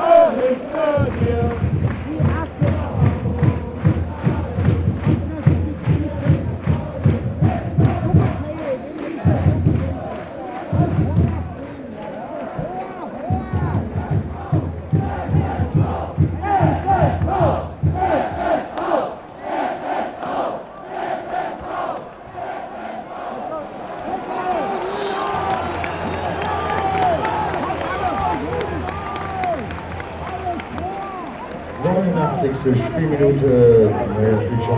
mainz: stadion am bruchweg - the city, the country & me: football stadium of fsv mainz 05, south stands
the last minutes of the match, hamburger sv scores a goal an wins 1-0 over mainz 05, the fans of mainz 05 call the scorer an asshole and the referee a cheat
the city, the country & me: october 16, 2010
Mainz, Deutschland